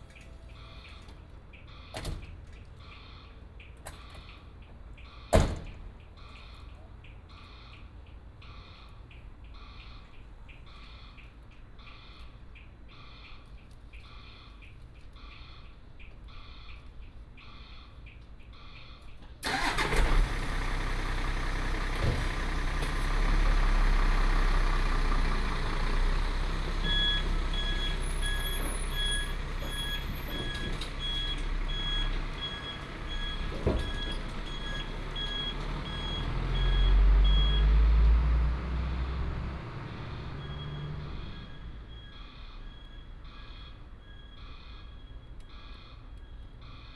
維軒門市, Fangliao Township - Truck unloading
Night outside the convenience store, Late night street, Traffic sound, Truck unloading, Game Machine Noise, Dog
Binaural recordings, Sony PCM D100+ Soundman OKM II